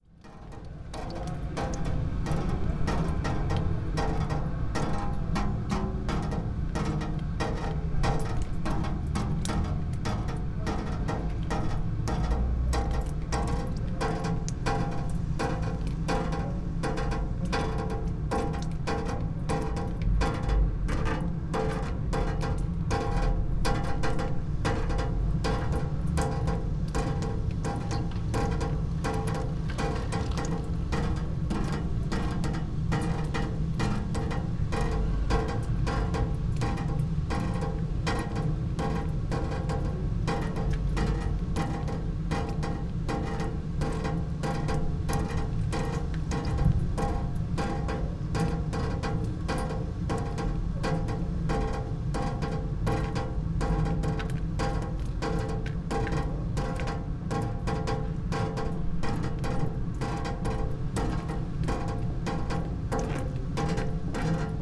Slomškov trg, Maribor - fountain, wind on water
minimalistic fountain with one water stream